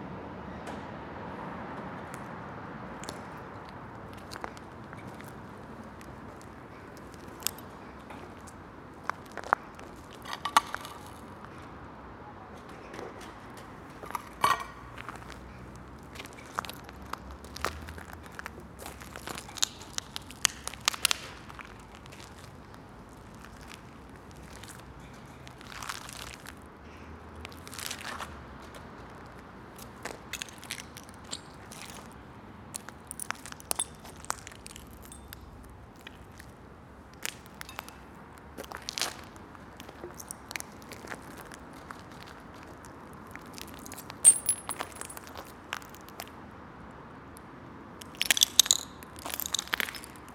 Inside an abandonded hangar in the Wasteland along the rail tracks near Weststation. You can hear the trains and traffic from the inside of the huge hall and the broken pieces of glass that I was walking on.
Molenbeek-Saint-Jean, Belgium